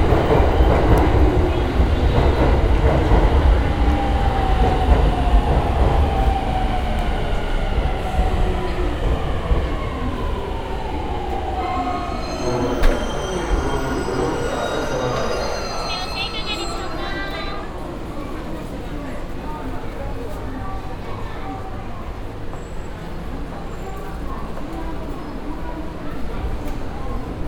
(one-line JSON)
{"title": "tokyo, akihabara station", "date": "2010-07-27 14:48:00", "description": "at akihabara station, general atmosphere, anouncemts and a train driving in\ninternational city scapes - social ambiences and topographic field recordings", "latitude": "35.70", "longitude": "139.77", "altitude": "13", "timezone": "Asia/Tokyo"}